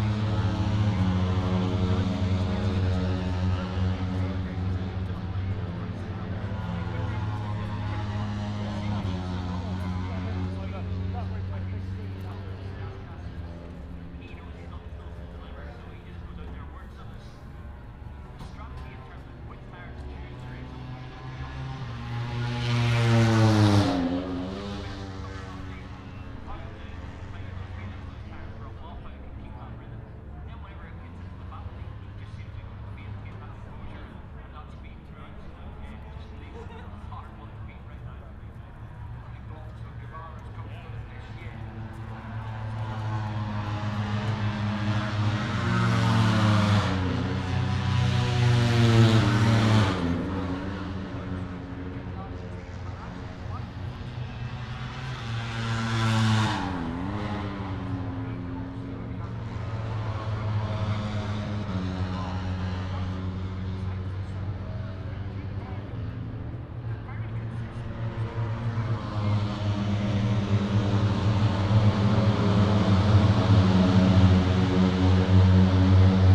Silverstone Circuit, Towcester, UK - british motorcycle grand prix 2022 ... moto three ...
british motorcycle grand prix 2022 ... moto three free practice two ... inside of maggotts ... dpa 4060s clipped to bag to zoom h5 ...
England, UK, 5 August 2022